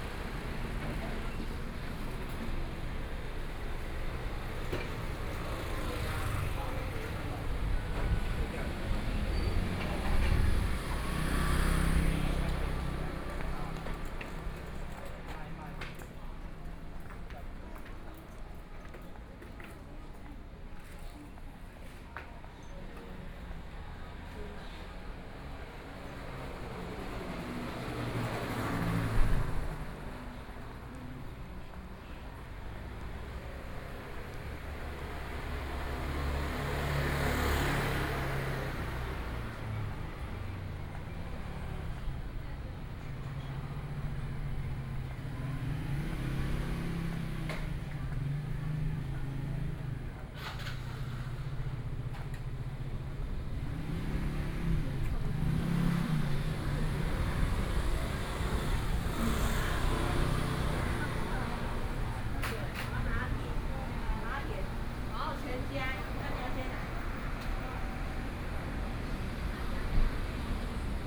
Walking through the market in a different way, Traffic Sound, Various shops sound
Sony PCM D50+ Soundman OKM II